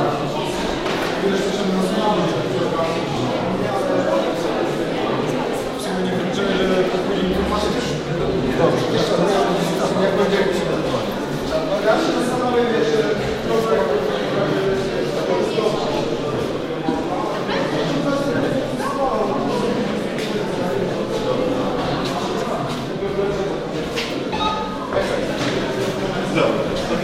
art opening, Kronika Gallery Bytom Poland